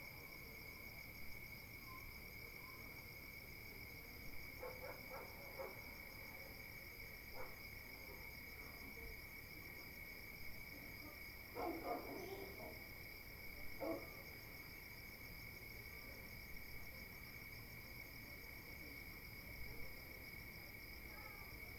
CILAOS Réunion - 20200211 1936-2010 CILAOS
20200211_19H36 À 20H10_CILAOS
CHANTS DES GRILLONS DÉBUT DE NUIT D'ÉTÉ